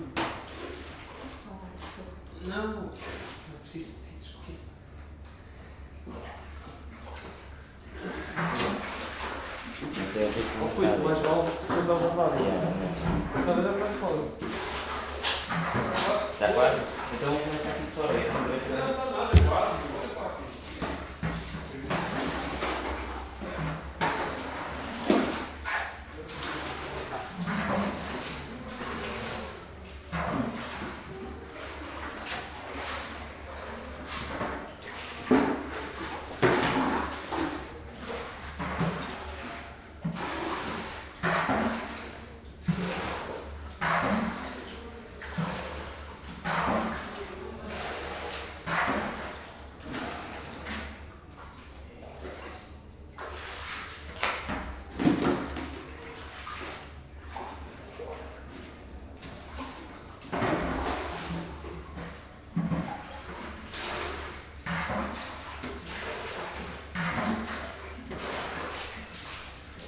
Rádio Zero, IST, Lisboa... shoveling water after the flood
Shovelling water out of the area where Rádio Zero new studios will be, after a big day of rain has siped inside the building.
Olympus LS-5